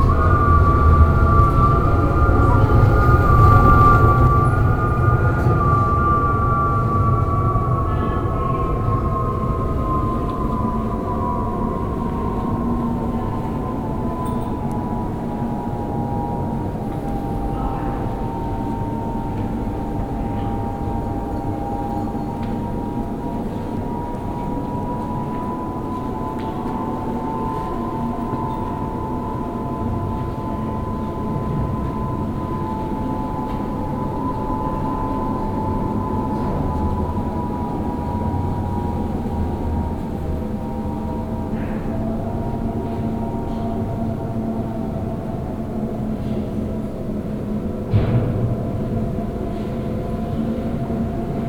KulturpalastKlang / culture palace, Warschau / Warsaw - wind in elevator tubes, extended version